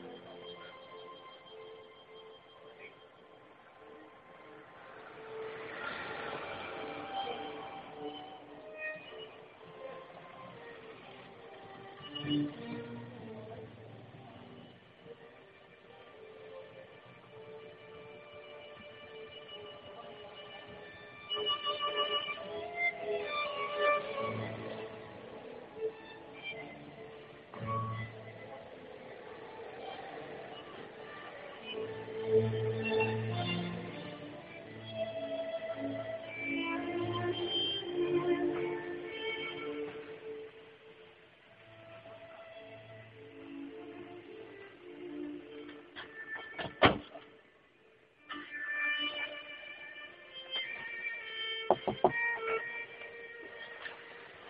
Amsterdam - to no one
govorit golos odnovo tsjeloveka
Amsterdam Zuidoost, The Netherlands, 12 December 2010, 16:37